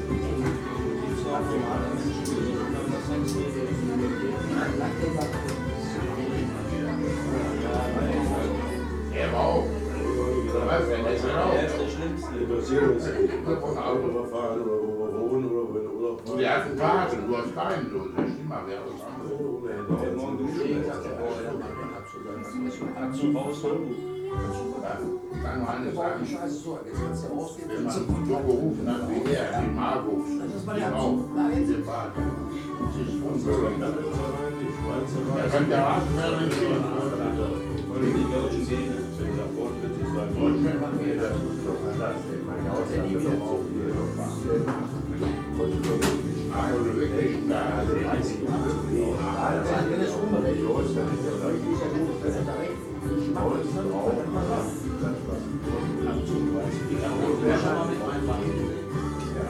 gildenstübchen - gildenstübchen, hamm-isenbeck
gildenstübchen, hamm-isenbeck
Hamm, Deutschland, 16 January 2010, ~21:00